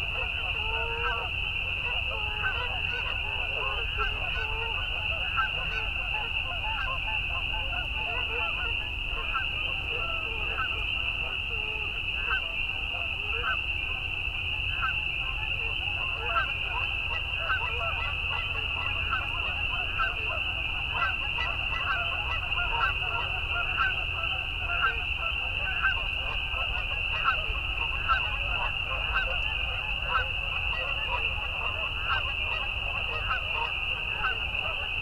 Tiny Marsh, Tiny, Ontario - Tiny Marsh in the Evening
Tiny Marsh, Tiny, Ontario - May 14, 2019
Best heard through headphones.
Marsh sounds in the evening (9:30pm) Rural marsh with Canada Geese, Peepers. Jet flies overhead. Mics placed 0.5 Km into the marsh on a dike in open area. Natural reverb from trees surrounding open water. Road noise 2Km away. Recorded with ZoomF4 with UsiPro Omni mics. No post processing used of any kind. I am a beginner and looking for CC.
ON, Canada